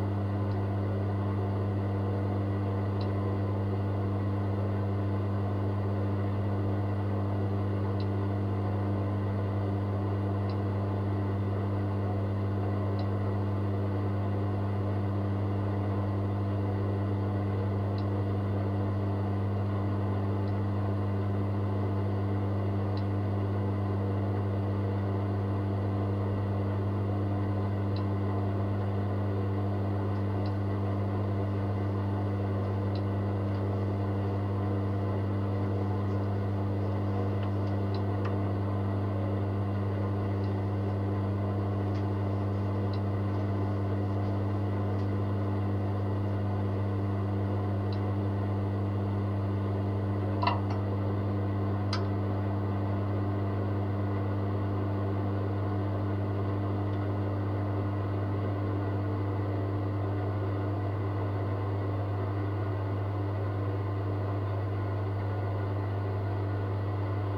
Unnamed Road, Malton, UK - the boiler ...
the boiler ... pair of jr french contact mics either side of casing ... there are times of silence ... then it fires up at 08:45 and 14:00 ...